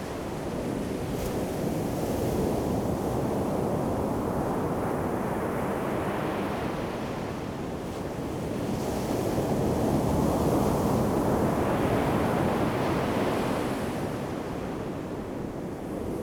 Donghe Township, Taitung County - Sound of the waves
In the beach, Sound of the waves, Very hot weather
Zoom H2n MS+ XY